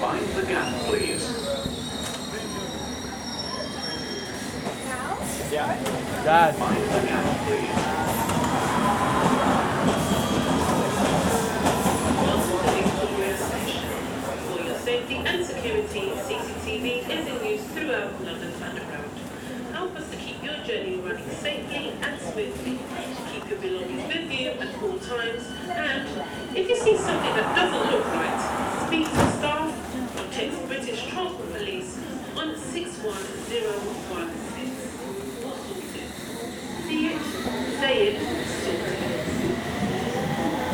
Victoria St, London, Vereinigtes Königreich - London - Victoria Station - subway
At the subway in London Victoria Station - steps, people, trains arriving and leaving - automatic announcement "mind the gap"
soundmap international:
social ambiences, topographic field recordings
England, United Kingdom, March 2022